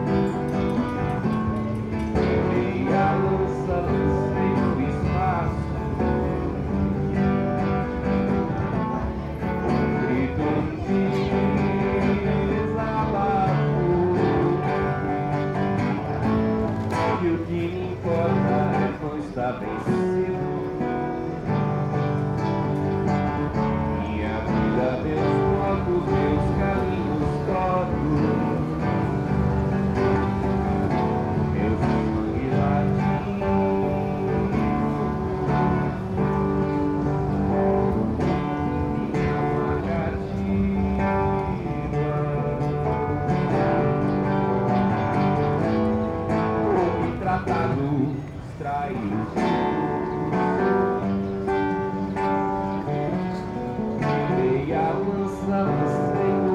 Calçadão de Londrina: Músico de rua: violonista (amplificado) - Músico de rua: violonista (amplificado) / Street musician: guitarist (amplified)
Panorama sonoro: músico de rua cantando e tocando a música “Sangue latino” de Ney Matogrosso com auxílio de microfone e caixa amplificadora, no Calçadão de Londrina nas proximidades da Praça Marechal Floriano Peixoto. Algumas pessoas sentavam-se nos bancos em frente ao músico e acompanhavam suas músicas. Outras passavam sem dar atenção e, algumas, contribuíam com algum dinheiro.
Sound panorama: A street musician singing and playing the song "Sangue latino" by Ney Matogrosso with the help of a microphone and amplifier box, on the Londrina boardwalk near Marechal Floriano Peixoto Square. Some people sat on benches in front of the musician and accompanied their music. Others passed without paying attention and some contributed money.